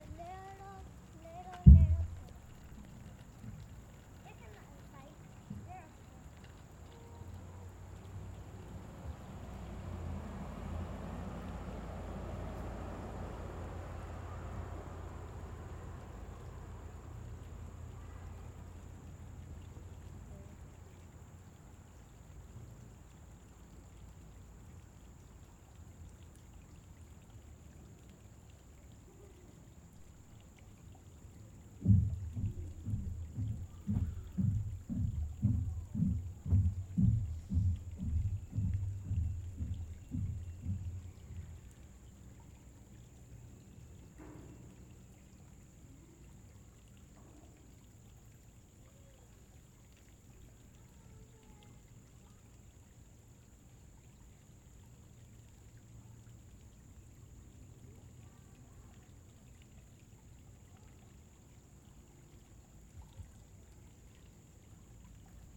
A small covered bridge over a creek. A family walking by, cars cruising on the road beyond the trail. A man's heavy footsteps nearly mask the sound of the creek, but not quite.
GA, USA